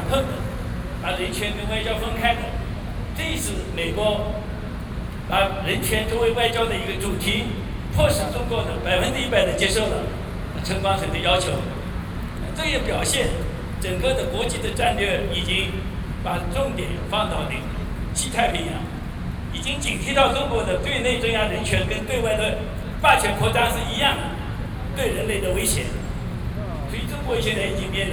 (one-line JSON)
{"title": "National Chiang Kai-shek Memorial Hall, Taipei - speech", "date": "2012-06-04 19:22:00", "description": "Commemorate the Tiananmen Incident., Sony PCM D50 + Soundman OKM II", "latitude": "25.04", "longitude": "121.52", "altitude": "8", "timezone": "Asia/Taipei"}